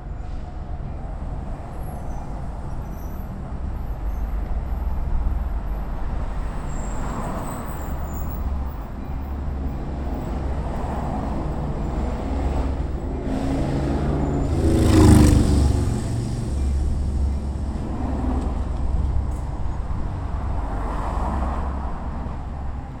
Lake St. bridge - Lake St. bridge, auto traffic passing with CTA Elevated train passing overhead